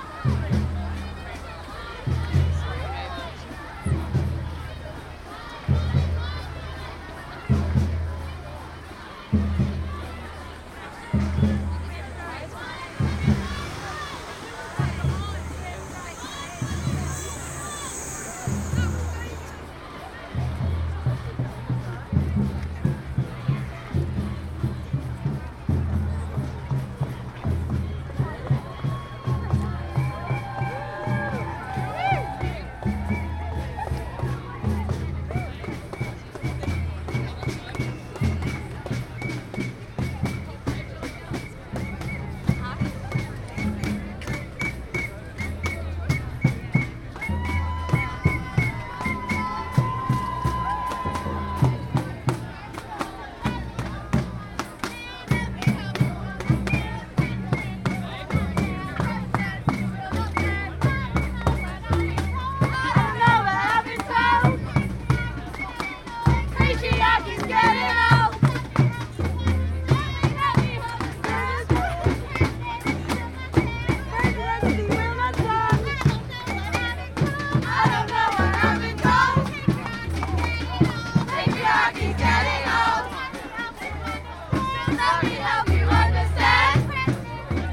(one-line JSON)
{"title": "St. James's, London, UK - This Presidency Will Not Stand", "date": "2017-01-21 13:00:00", "description": "This is a recording of one of the chants sung on the Women's March on 21st January 2017, where many of us gathered - 100,000 or so according to the estimates - to protest against the threats to human rights and equality posed by the new US President. Recording cut short because once I joined in with the chanting, the audio got very peaky!", "latitude": "51.51", "longitude": "-0.14", "altitude": "31", "timezone": "GMT+1"}